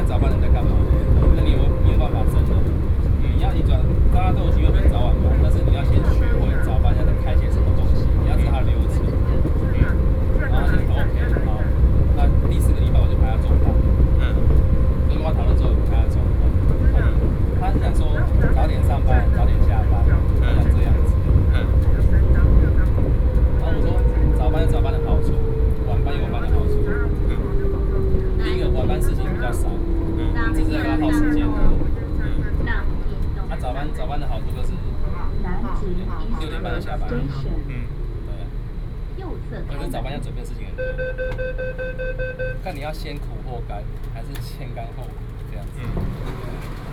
Wenhu Line, Taipei City - MRT inside
Zhongshan District, Taipei City, Taiwan, 9 November